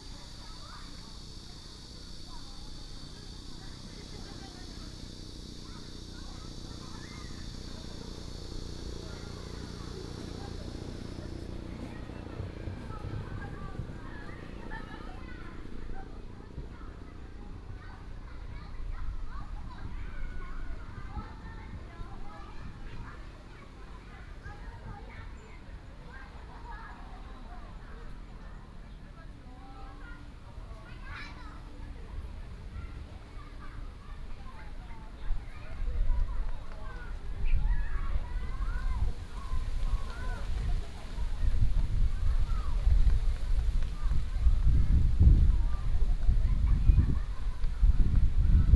頭城鎮城北里, Yilan County - In Sports Park
In Sports Park, Birdsong, Very hot weather, Traveling by train, Child's voice